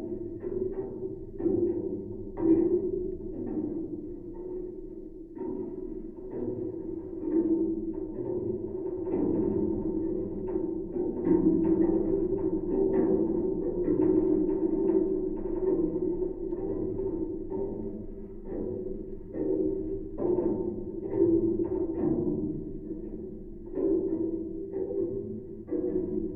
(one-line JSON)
{"title": "pohorje mountain watchtower - pohorje watchtower spiral staircase", "date": "2011-11-21 14:30:00", "description": "steps on the spiral staircase, also generator or aircon noise, contact mic recording", "latitude": "46.51", "longitude": "15.57", "altitude": "1143", "timezone": "Europe/Ljubljana"}